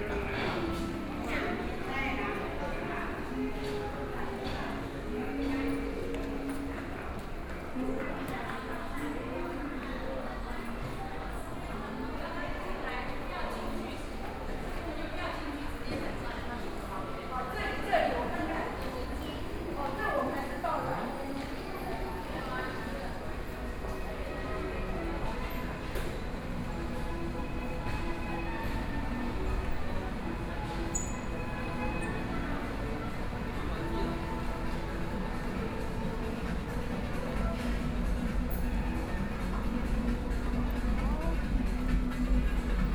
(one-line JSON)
{"title": "Zhongshan Dist., Taipei City - In the underground mall", "date": "2013-10-31 18:25:00", "description": "Underground shopping street, From the station to department stores, Binaural recordings, Sony PCM D50 + Soundman OKM II ( SoundMap20131031- 10)", "latitude": "25.05", "longitude": "121.52", "altitude": "11", "timezone": "Asia/Taipei"}